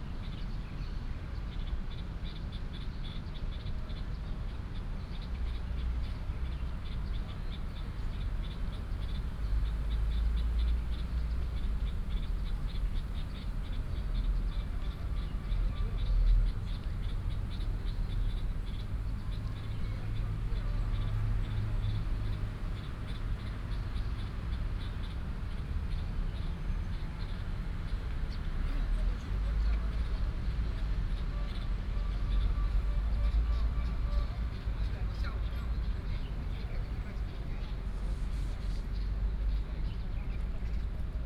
In sports park, birds sound, traffic sound, Athletic field
Longtan District, Taoyuan City, Taiwan